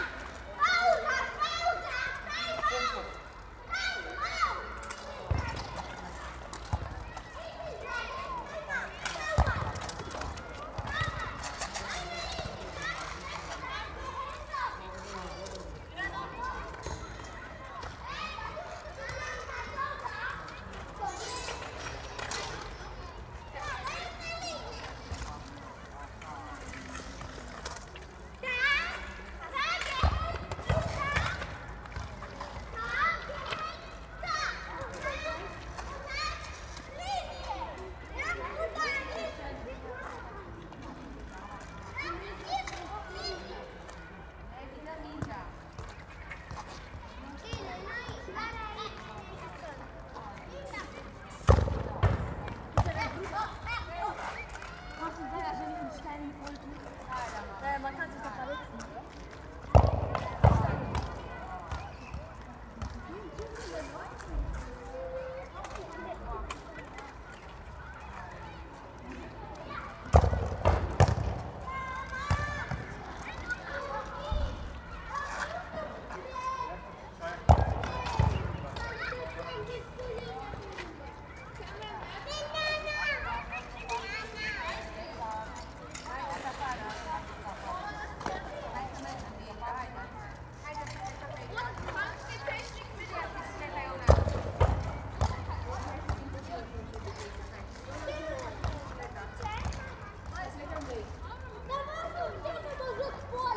Bindermichl Tunnel, Linz, Austria - Kids kicking footballs around between concrete walls
Lots of evening activity in this park, where basketball, volleyball, mini-football, skateboard pitches have been setup between the concrete wall of this roundabout design.
8 September 2020, 19:11